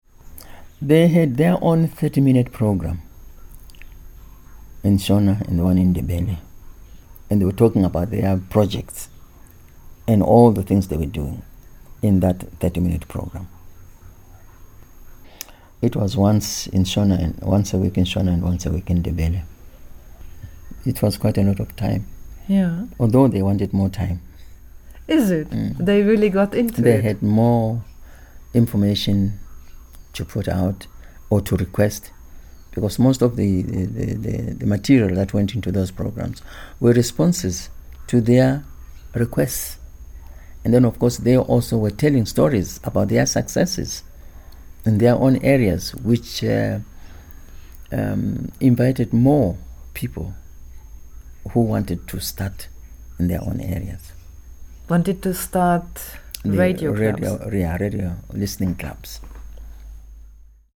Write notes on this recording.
We are meeting the veteran broadcaster, 85-year-old Mavis Moyo in her home. The windows to the garden are all open. The fresh breeze is playing with the curtains, and the palm-trees outside… somewhere in the kitchen a tap is dripping… Mavis takes us through her story as a rural woman entering broadcasting profession. Her passion for the development of rural women made her the driving force of the Development Through Radio project in the 1980s; and this is what she describes for us here… Mavis Moyo, veteran broadcaster with ZBC Radio 4, founding member of Federation of African Media Women Zimbabwe (FAMWZ).